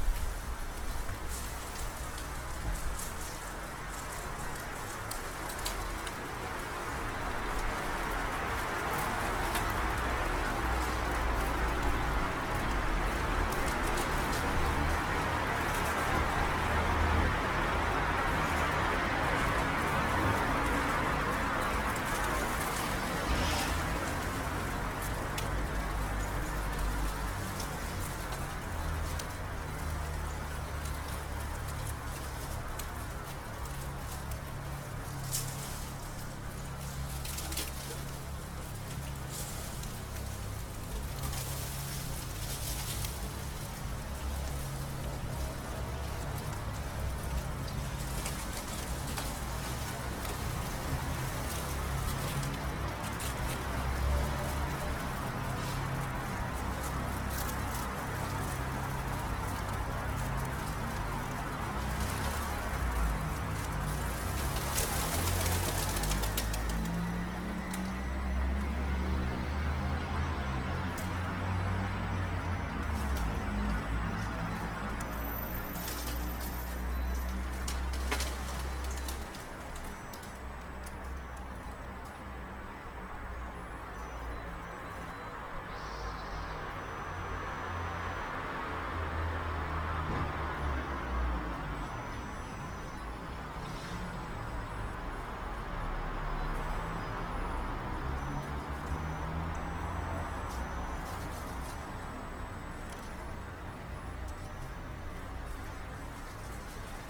Budapest, Bajza u., Hungary - Pigeons games
Epreskert (Mulberry Garden) inherited its name from the mulberry trees that covered the area and belongs to the Academy of Fine Arts. Epreskert consists of five buildings each containing studios and was founded as a master painter school in 1882 and has been an integral part of the Academy since 1921. Cold December morning falling leafs from mulberry trees used by flock of pigeons to exercise some strange game just above my head.
1 December 2018, 08:21